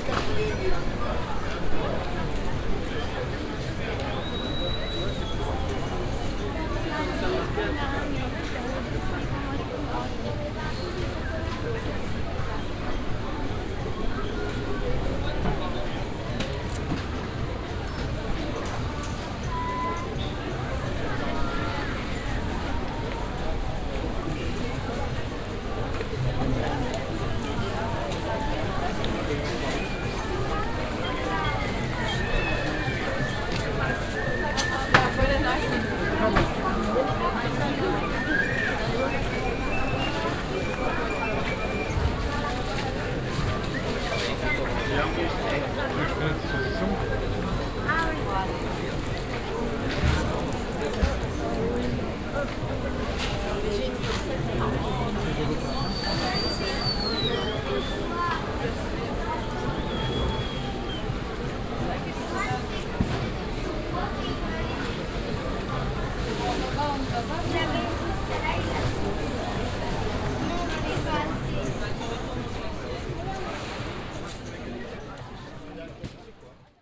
saint remy de provence, market
On the weekly market in between the market stalls that are distributed all over the street and square. The sound of the general atmosphere and a whistling marketeer.
international village scapes - topographic field recordings and social ambiences
Saint-Rémy-de-Provence, France